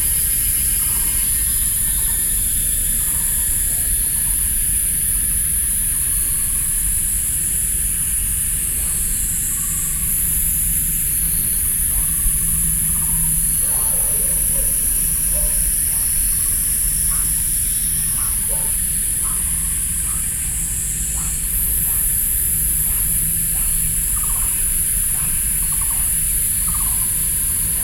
{"title": "Section, Mínyì Road, Wugu District - Hot summer", "date": "2012-07-03 16:46:00", "latitude": "25.13", "longitude": "121.42", "altitude": "372", "timezone": "Asia/Taipei"}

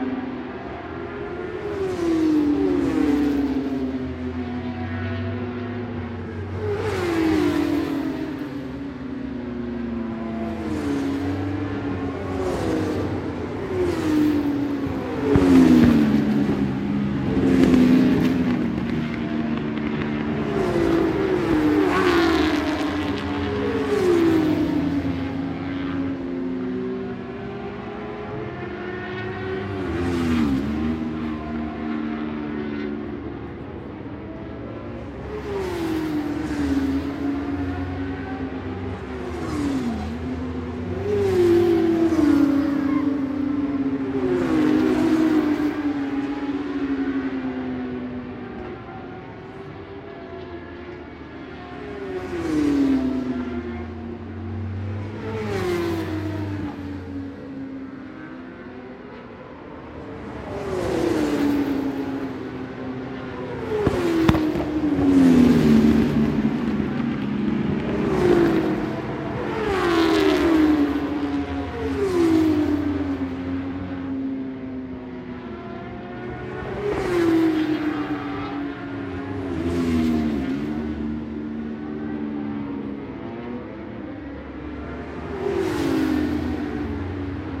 {"title": "Scratchers Ln, West Kingsdown, Longfield, UK - BSB 2005 ... Superbikes ... FP2 ...", "date": "2005-03-26 15:00:00", "description": "BSB ... Superbikes ... FP2 ... one point stereo mic to minidisk ...", "latitude": "51.36", "longitude": "0.26", "altitude": "133", "timezone": "Europe/London"}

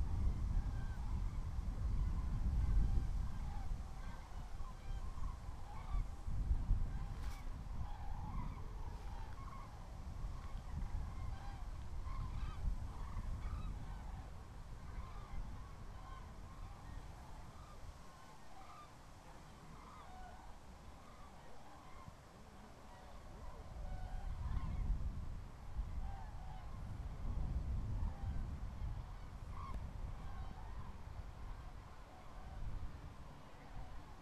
{"title": "Linum, Fehrbellin, Deutschland - cranes", "date": "2013-10-27 07:45:00", "description": "Sounds of cranes departing for their breakfast.", "latitude": "52.76", "longitude": "12.89", "altitude": "33", "timezone": "Europe/Berlin"}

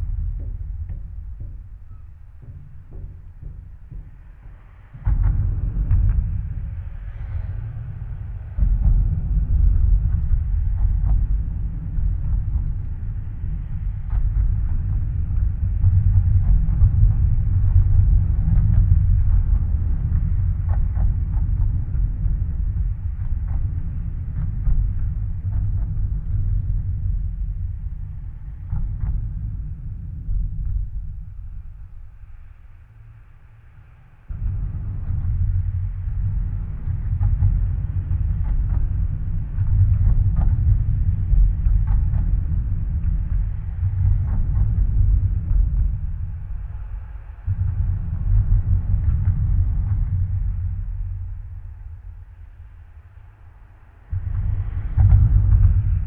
{"title": "Binckhorstlaan, Den Haag - Carbridge & Birds", "date": "2012-11-29 18:42:00", "description": "Instead of recording underwater, I used the hydrophones as contact microphones and placed them at the beginning of the cartridge located at the Binckhorstlaan.\nRecorded using two hydrophones and a Zoom H4.", "latitude": "52.06", "longitude": "4.34", "altitude": "2", "timezone": "Europe/Amsterdam"}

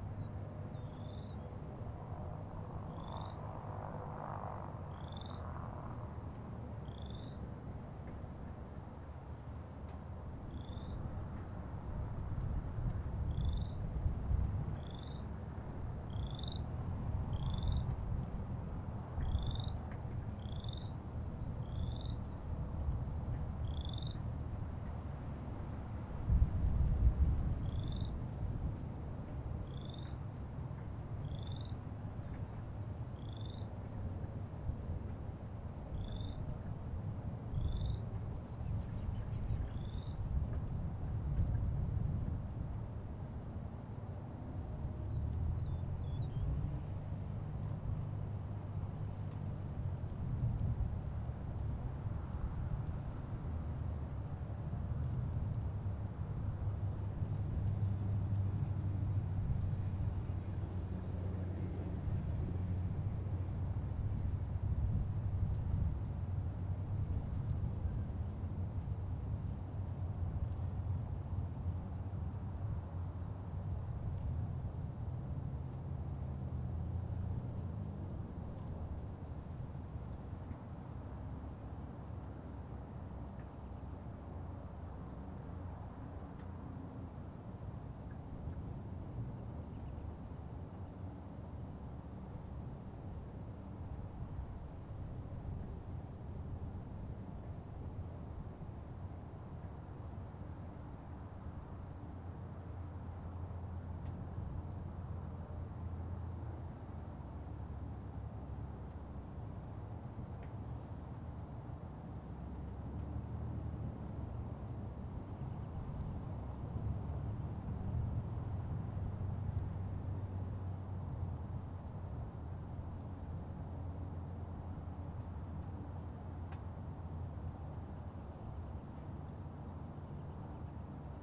{"title": "Forest Lake Airport", "date": "2022-04-21 14:39:00", "description": "Recorded at a small parking area where people walk their dogs adjacent to the airport. A cessna working the pattern can be heard, as well as some frog, and traffic on Highway 61.", "latitude": "45.24", "longitude": "-92.99", "altitude": "279", "timezone": "America/Chicago"}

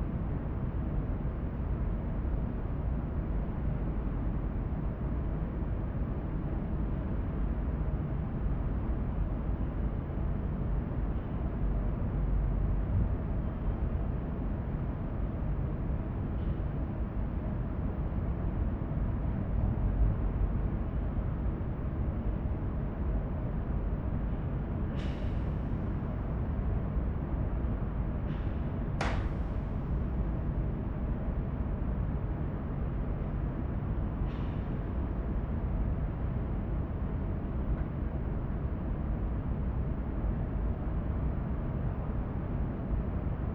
{"title": "Wersten, Düsseldorf, Deutschland - Düsseldorf. Provinzial building, conference room", "date": "2012-12-11 12:30:00", "description": "Inside the building of the insurance company Provinzial in a conference room entitled \"Room Düsseldorf\". The sonorous, constant sound of the room ventilation and some mysterious accents in the empty room.\nThis recording is part of the exhibition project - sonic states\nsoundmap nrw -topographic field recordings, social ambiences and art places", "latitude": "51.20", "longitude": "6.81", "altitude": "44", "timezone": "Europe/Berlin"}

